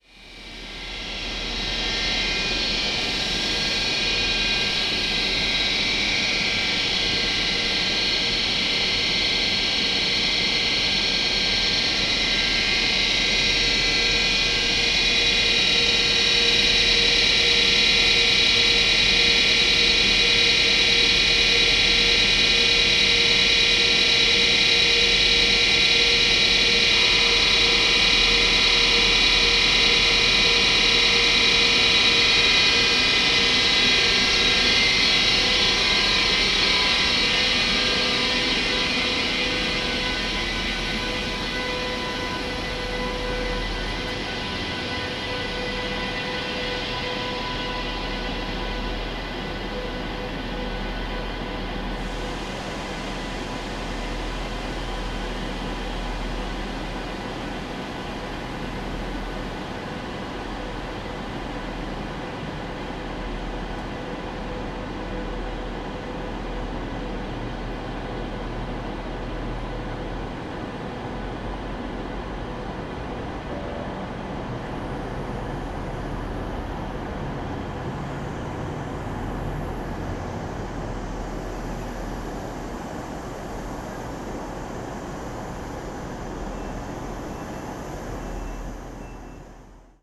Leipzig Hbf - train engine closeup
waiting for my train. idle IC engine, then the train slowly moves away.
(tech note: olympus LS5)